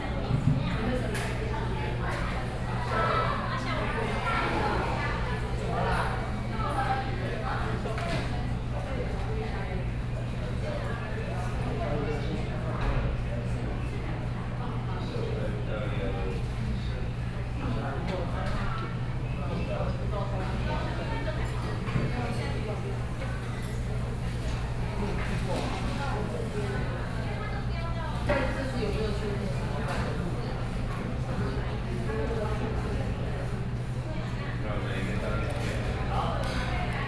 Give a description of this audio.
In the restaurant, Sony PCM D50 + Soundman OKM II